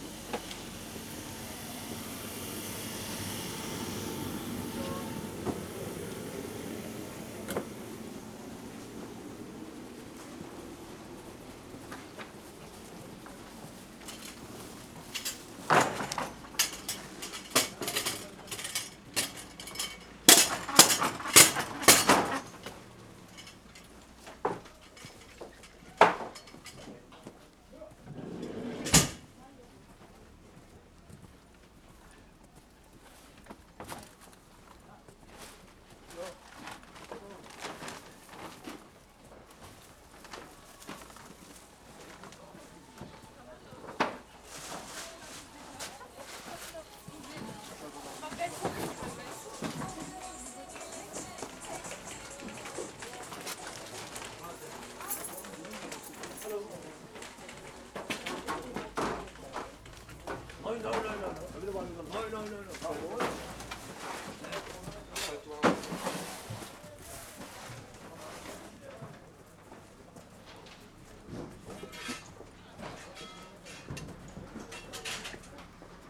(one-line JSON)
{"title": "berlin, maybachufer: wochenmarkt - the city, the country & me: market day", "date": "2010-12-17 18:07:00", "description": "a walk around the market, cold and snowy winter evening, market is finished, marketeers dismantle their market stalls\nthe city, the country & me: december 17, 2010", "latitude": "52.49", "longitude": "13.42", "altitude": "38", "timezone": "Europe/Berlin"}